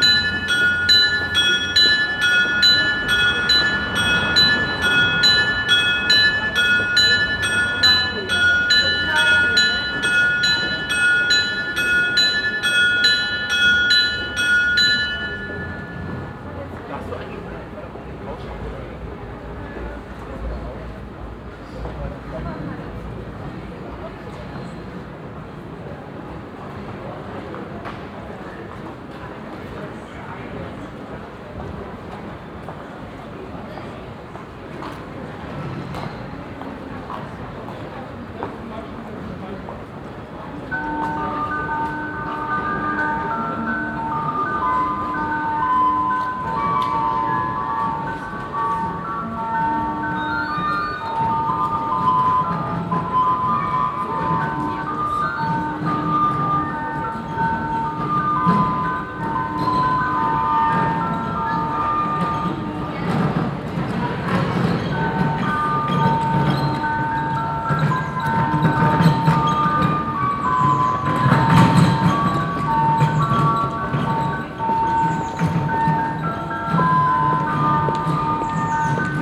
essen - essen, deiter, bell play
Eine weitere, lange Aufnahme des Glockenspiels an einem milden, windigem Frühsommertag um 12Uhr miitags.
Another recording of the bell play at 12o clock on a mild windy early summer day.
Projekt - Stadtklang//: Hörorte - topographic field recordings and social ambiences